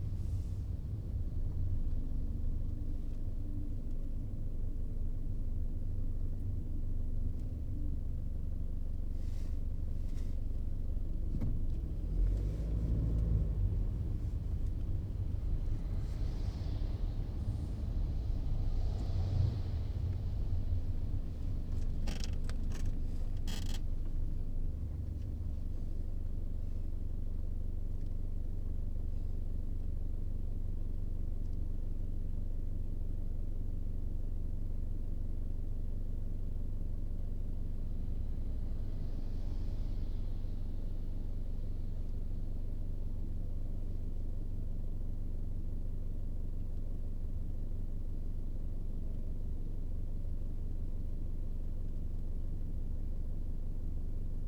the city, the country & me: may 18, 2010
Berlin, Germany